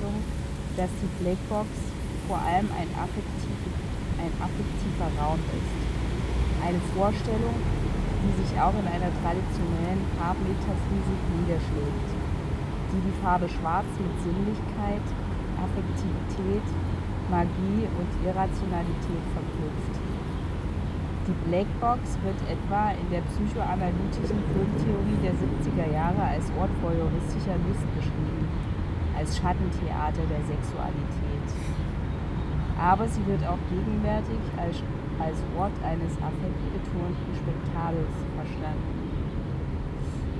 Str. des 17. Juni, Berlin, Deutschland - Lesegruppedecolbln XI
The reading group "Lesegruppedecolbln" reads texts dealing with colonialism and its consequences in public space. The places where the group reads are places of colonial heritage in Berlin. The text from the book "Myths, Masks and Themes" by Peggy Pieshe was read at the monument of Frederick I and Sophie Charlotte, who stands in colonial politics and the slave trade next to a 3-lane road.